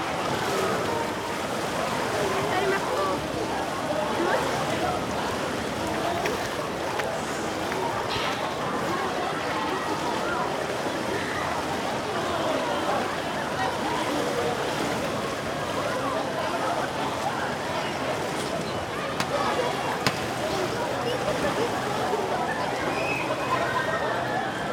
Bains de la motta, Fribourg - Swimming Pool during summer in Switzerland (Fribourg, Bains de la Motta)
Outside swimming pool in Switzerland during summer, voices, water sounds, splash and people swimming.
Recorded by an ORTF setup Schoeps CCM4 x 2
On Sound Devices 633
Recorded on 27th of June 2018
GPS: 46,80236244801847 / 7,159108892044742
27 June 2018, 12:00